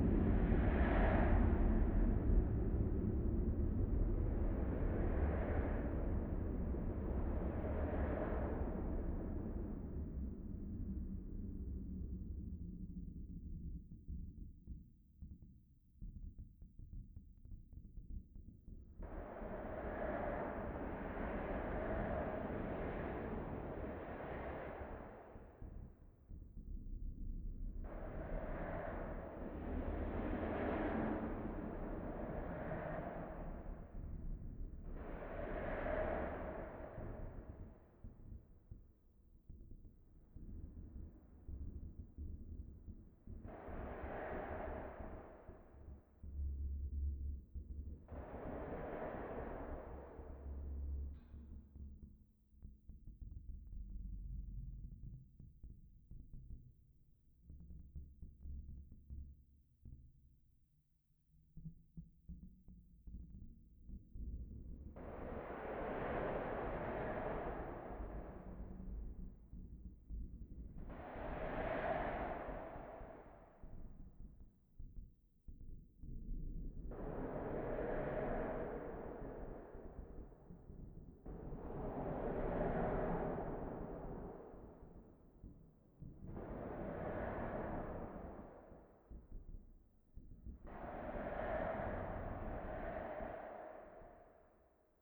Altstadt, Düsseldorf, Deutschland - Düsseldorf, Bridge Oberkassel, bridge abutment
Inside the bridge abutment of the Oberkasseler bridge. The sounds of car traffic and trams passing by and reverbing in the inner bridge hall.
This recording is part of the exhibition project - sonic states
soundmap nrw - topographic field recordings, social ambiences and art places
Düsseldorf, Germany, 19 November 2012